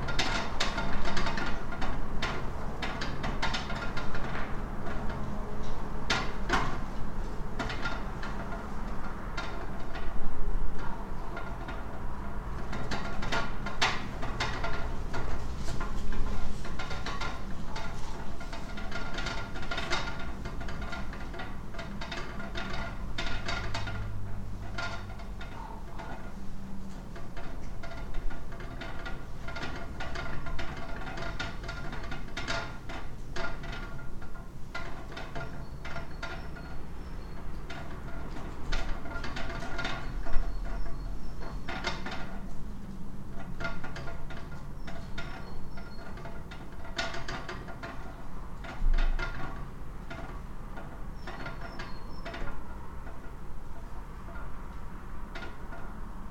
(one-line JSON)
{"title": "Antalgė, Lithuania, abandoned school 2nd floor", "date": "2020-02-24 15:40:00", "description": "in the corridor of the 2nd floor of abandoned school", "latitude": "55.49", "longitude": "25.49", "altitude": "163", "timezone": "Europe/Vilnius"}